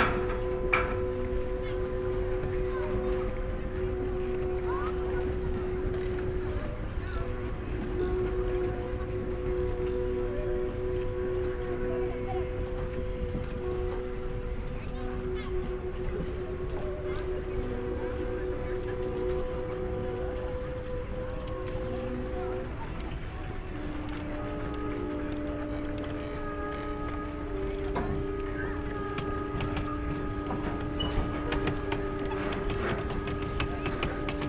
Viña del Mar, Valparaíso, Chile - Tsonami sound performance at laguna Sausalito
Viña del Mar, laguna Sausalito, sound performance for 16 instruments on pedal boats, by Carrera de Música UV and Tsonami artists
(Sony PCM D50, DPA4060)